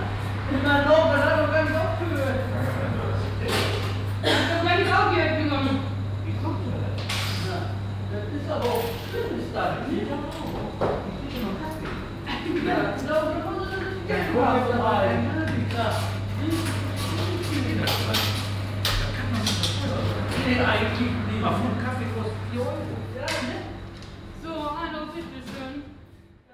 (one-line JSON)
{"title": "Südviertel, Essen, Deutschland - essen, huyssenallee, bakery", "date": "2014-06-03 12:40:00", "description": "In einer Bäckerei. Der Klang der Stimmen von Verkäuferinnen und Käufern, Papiertüten und Geld.\nInside a bakery. The sound of the local voices of the shop assistants and the customer, paper bags and money.\nProjekt - Stadtklang//: Hörorte - topographic field recordings and social ambiences", "latitude": "51.44", "longitude": "7.01", "altitude": "108", "timezone": "Europe/Berlin"}